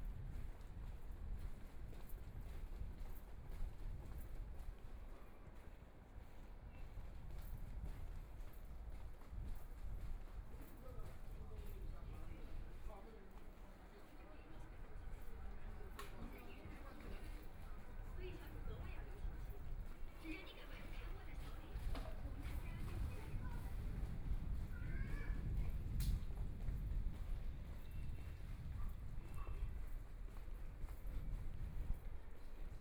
Huangpu District, Shanghai - Walking through the old house
Walking in the roadway in the community, Old area is about to be demolished, Walking in the narrow old residential shuttle, Binaural recording, Zoom H6+ Soundman OKM II